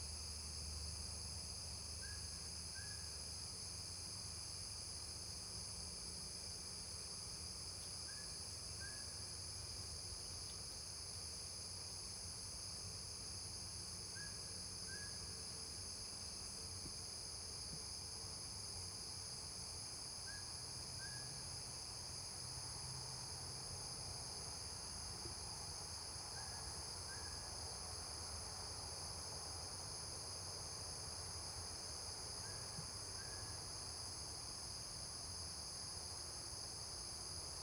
Insect calls, Birds call
Zoom H2n MS+XY